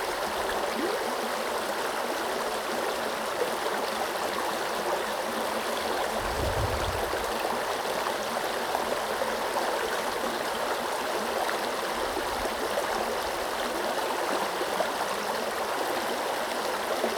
The River Went, ladies and gents.
Recorded w/ ZOOM H1, hi-pass filter used in Audacity to reduce wind noise.
18 August 2014, 14:07